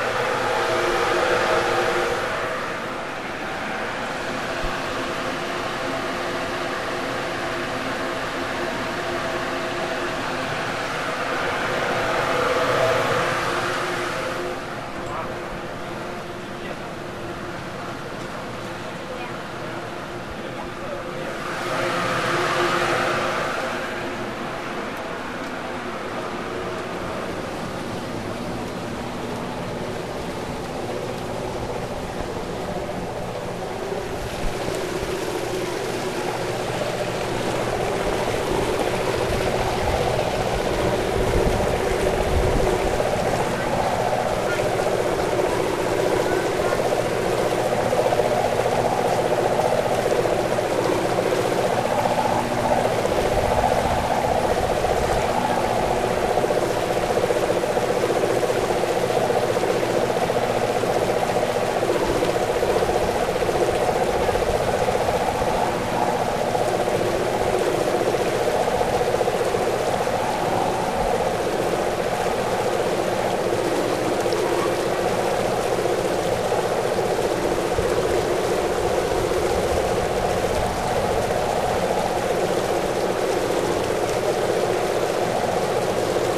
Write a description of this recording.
Cijin Ferry, KaoShiung. Taiwanese Broadcasting system.